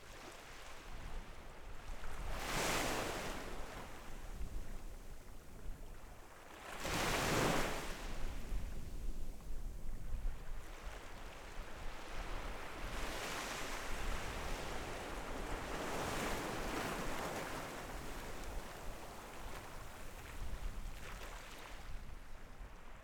Sound of the waves, Very hot weather, In the beach
Zoom H6 XY
馬祖列島 (Lienchiang), 福建省 (Fujian), Mainland - Taiwan Border